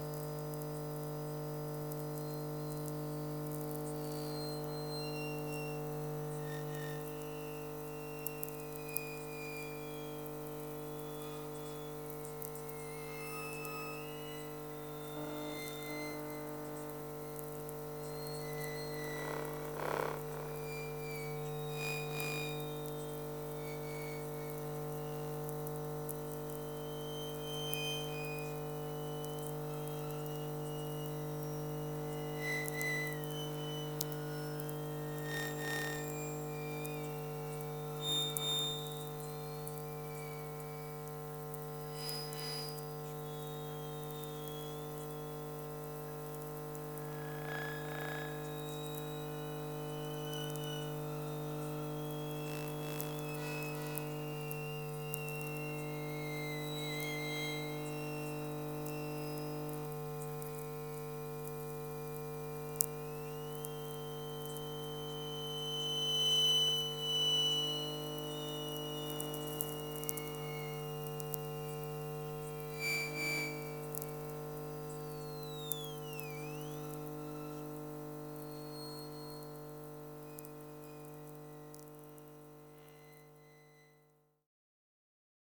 Kaliningrad, Russia, electromagnetic field under the bridge
standing under the bridge with electromagnetic antenna and listening to the traffic above